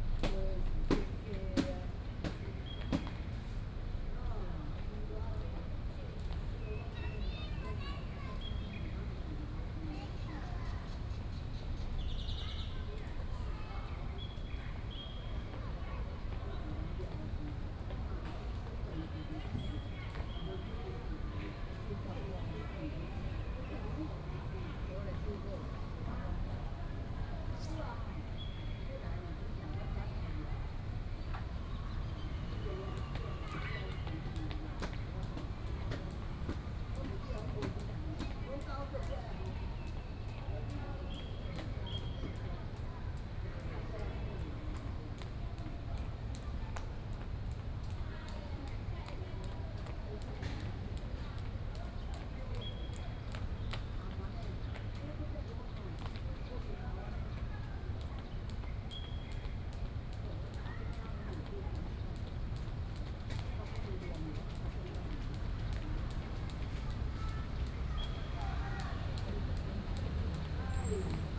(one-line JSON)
{"title": "和平公園, Da'an District - The elderly and children", "date": "2015-06-28 18:00:00", "description": "In the park, The elderly and children, Bird calls, Very hot weather, Rope skipping", "latitude": "25.02", "longitude": "121.54", "altitude": "20", "timezone": "Asia/Taipei"}